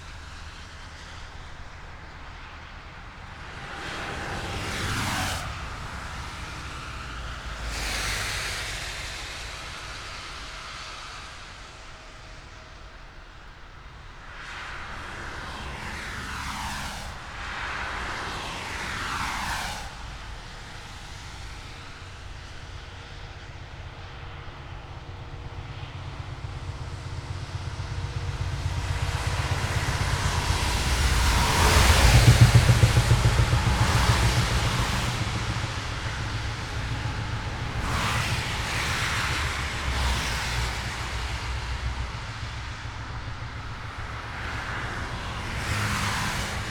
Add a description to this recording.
on top of Autobahn bridge, between Berlin Buch and Karow, sound of cars and vans on wet asphalt, (Sony PCM D50, DPA4060)